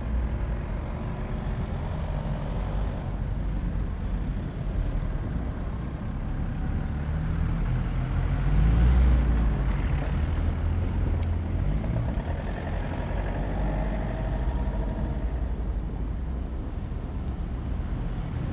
Berlin: Vermessungspunkt Maybachufer / Bürknerstraße - Klangvermessung Kreuzkölln ::: 02.12.2010 ::: 19:23